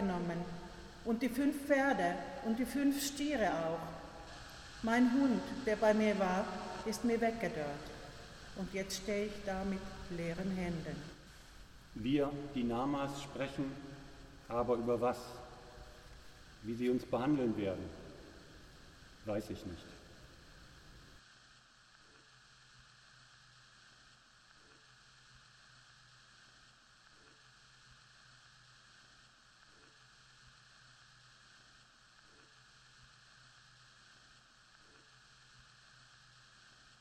Echos unter der Weltkuppel 09 GroßerHörsaal Echos unter der Weltkuppel
Hamburg, Germany, November 2009